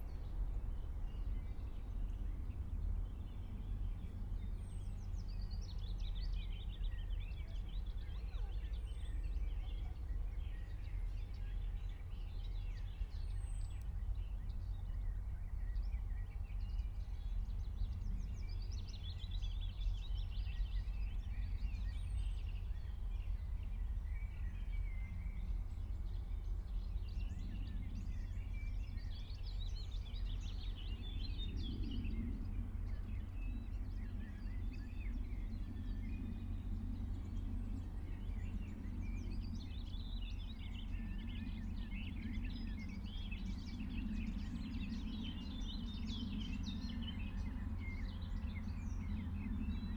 near airport Köln Bonn, Nordschneise, runway - aircraft descending
northern runway, at the edge of Köln-Bonn airport, which is embedded into a beautiful heathland and forest area, with rich biodiverity. The area is in parts a result of the degradation by military training after WW2.
Military exercises with tanks and other vehicles led on the one hand to the fact that the expansion of the forest and bush area was counteracted, a quite useful measure in the nature conservation sense. On the other hand, the loss of valuable biotopes was often associated with this. The practice operation caused ecological damage and resulted in drainage and filling of wetlands. Large areas were used for the barracks. But the military restricted area also meant that nature could develop undisturbed in large parts of the heath. It was also not possible to build residential or commercial buildings on the heath areas.
(Sony PCM D50, DPA4060)
1 May 2019, 11:40